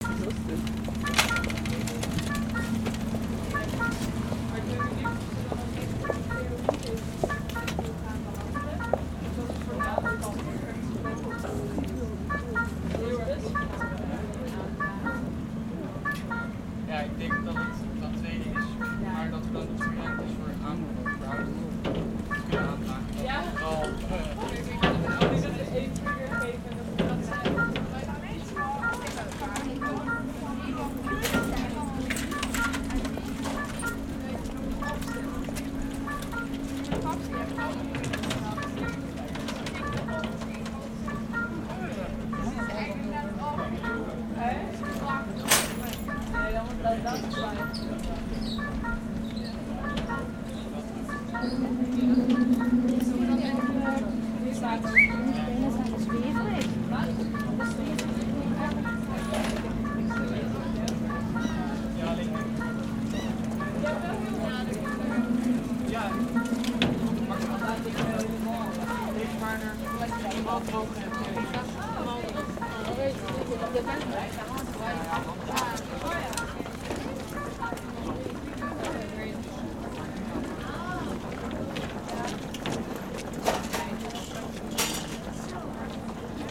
A ship is passing on the Maas; the bridge goes up to let it through. Pedestrians can pass, while people with bikes have to wait.